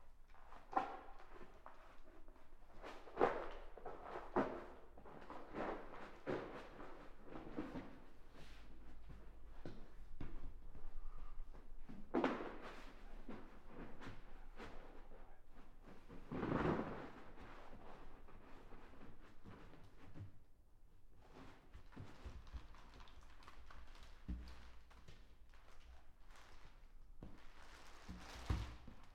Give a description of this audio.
A person taking down a large (approx. 5 x 3 x 3 meter) bubble tent that was used for storing items in a nitrogen atmosphere. The bubble material (aluminum compound material) is cut to pieces, folded up and placed on a pallet truck with which it is pulled away later on. Floor protection from PVC Material is rolled up. Some parts made of wooden bars are dismantled. The space is cleaned with a broom, the waste taken out and the area is locked. Binaural recording. Recorded with a Sound Devices 702 field recorder and a modified Crown - SASS setup incorporating two Sennheiser mkh 20 microphones.